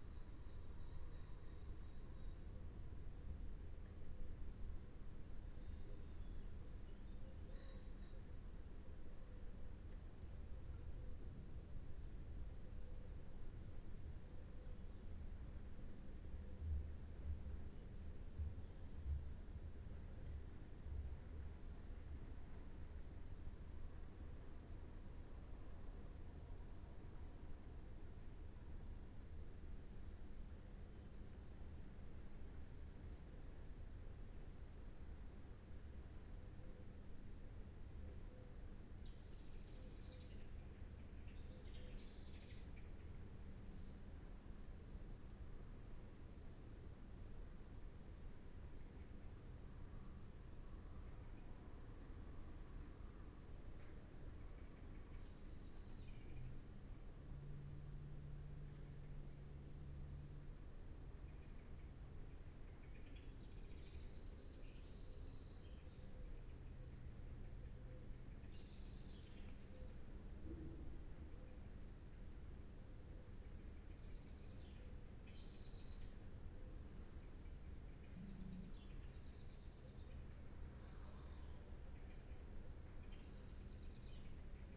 Inside church with blackbird calls outside ... lavalier mics in parabolic ... background noise ... bird calls ... pheasant ...